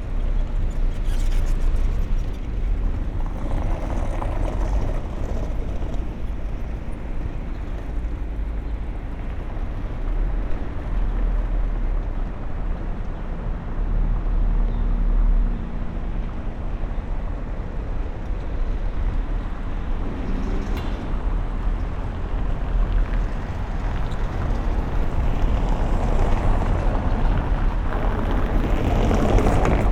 Berlin: Vermessungspunkt Friedel- / Pflügerstraße - Klangvermessung Kreuzkölln ::: 29.05.2012 ::: 13:18